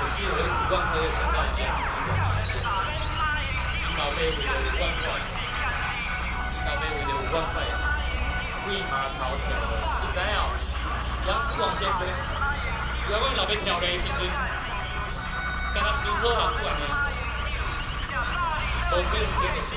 Taipei, Taiwan - Protest
Protest, Sony PCM D50 + Soundman OKM II
台北市 (Taipei City), 中華民國, 29 September 2013, 18:00